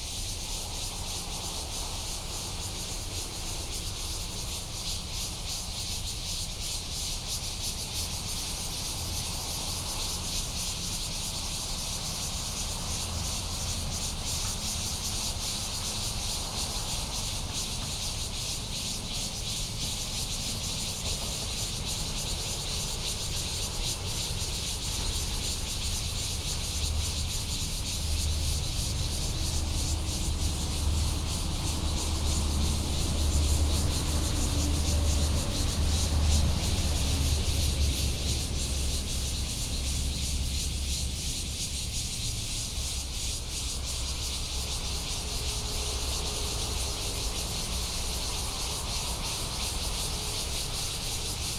埔頂公園, Daxi Dist., Taoyuan City - next to the highway
next to the highway, Traffic sound, In the park, Cicadas
Taoyuan City, Taiwan, 25 July